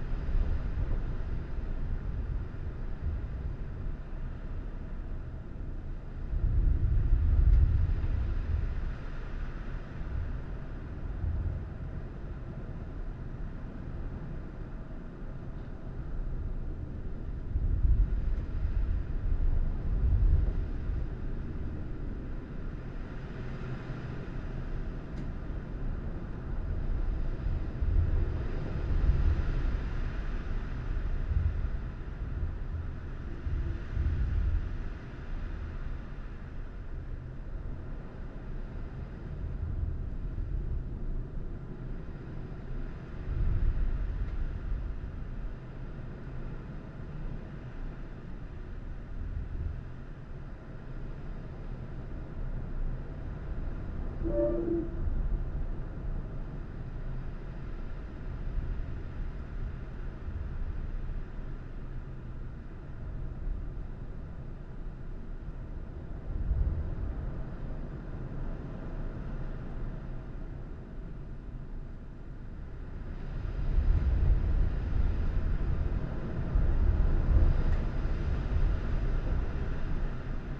Trégastel, France - Heavy wind from inside a house
Vent violent entendu depuis derrière la fenêtre.
Heavy wind from inside a house, recorded at the windows.
/Oktava mk012 ORTF & SD mixpre & Zoom h4n